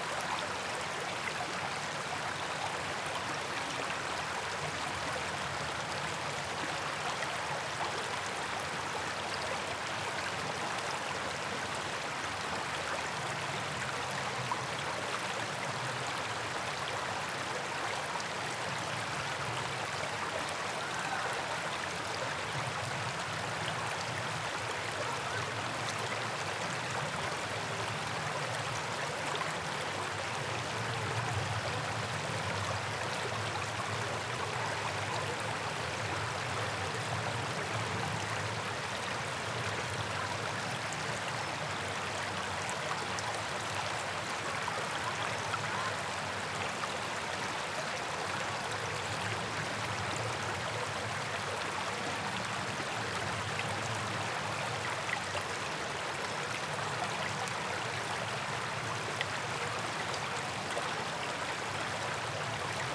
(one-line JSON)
{"title": "Walking Holme Digley Beck", "date": "2011-04-19 03:45:00", "description": "Beautiful wooded part of the river. Some kids playing in the background.", "latitude": "53.56", "longitude": "-1.83", "altitude": "219", "timezone": "Europe/London"}